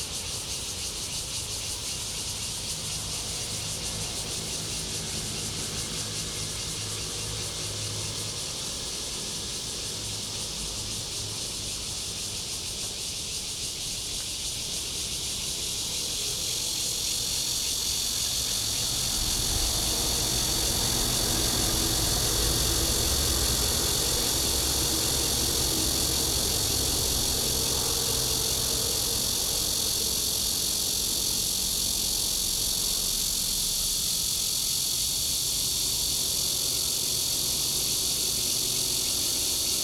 Sec., Minfu Rd., Yangmei Dist., Taoyuan City - In the pool side
In the pool side, Traffic sound, Opposite the train running through, Cicadas, Garbage truck passes, Zoom H2n MS+XY
Taoyuan City, Taiwan, August 2017